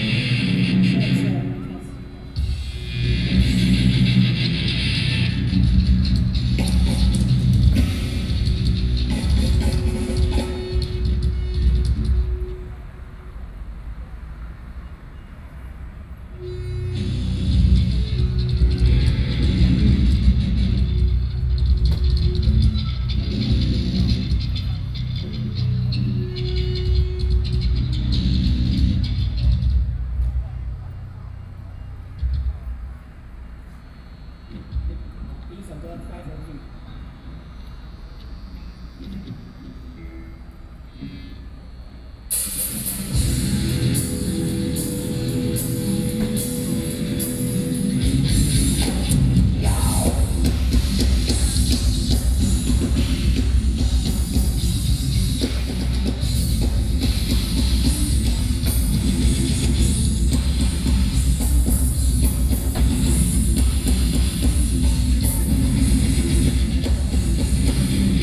Ketagalan Boulevard, Taipei - against nuclear power
Rock band performing, Sony PCM D50 + Soundman OKM II